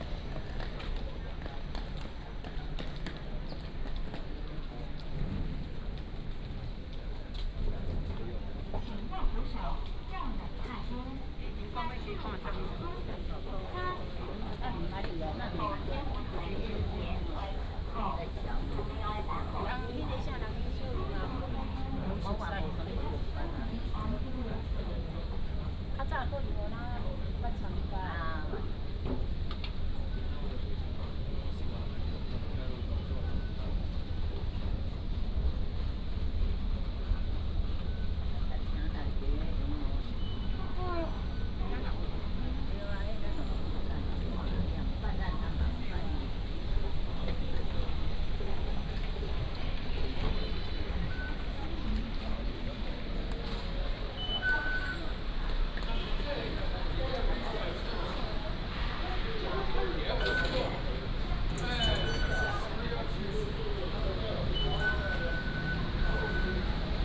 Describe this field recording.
From the station platform, Went export